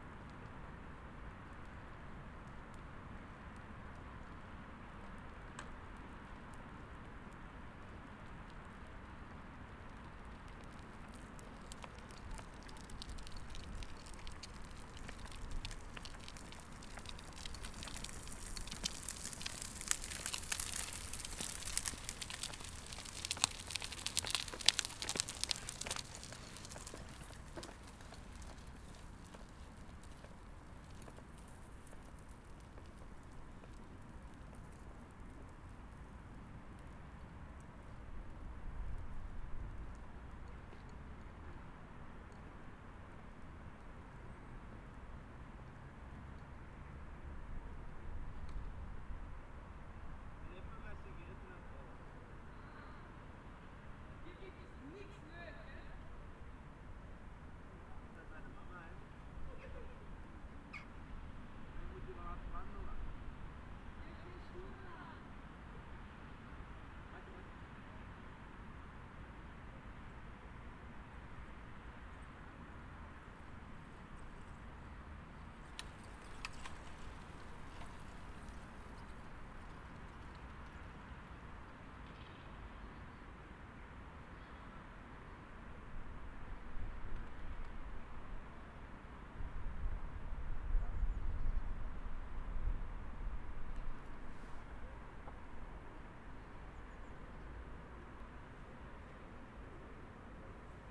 Caputsteenpark, Mechelen, België - Caputsteenpark
[Zoom H4n Pro] Small park next to the Mechelen jail. Fragments of a conversation between a woman in the park and her husband behind the jail walls.